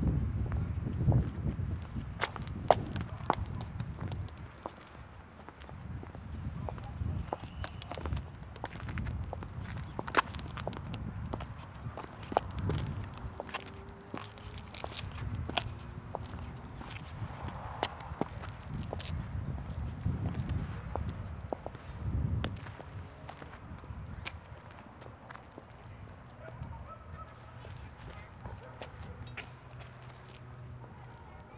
Srem, Zurawia Road - pebble pass
a lazy walk on a windy spring afternoon around apartment construction yards . a pebble tangled between the feet so we kicked it a few times on the road and it eventually ended up in a water drain. a pile of bricks caught my attention. grainy sound of bricks being slid against each other. deep in the background Sunday ambience of the town - kids playing serenely, ambulance darting across, motorcycles roaring...
Srem, Poland, 20 April 2014